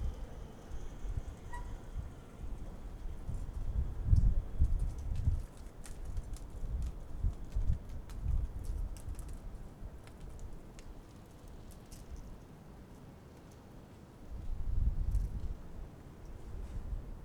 Berlin: Vermessungspunkt Friedelstraße / Maybachufer - Klangvermessung Kreuzkölln ::: 01.04.2011 ::: 01:18
1 April, ~01:00